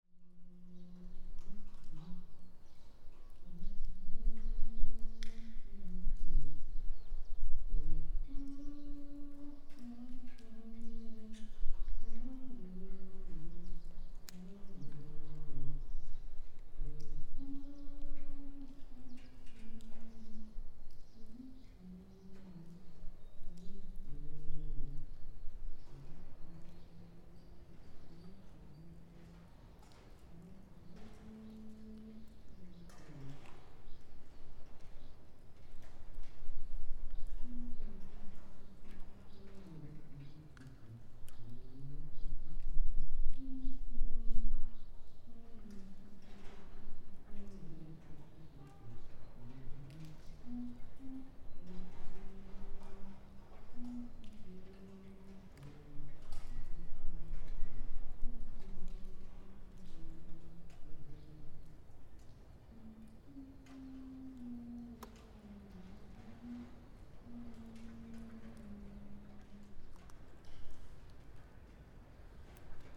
Buzludzha, Bulgaria, inside hall - Buzludzha, Bulgaria, large hall 5 humming
Stephan A. Shtereff is humming some tunes worker's songs, next to the wall, the microphones again on the other side of the hall, the acoustics is still working very well...
Стара Загора, Бългaрия, 16 July 2019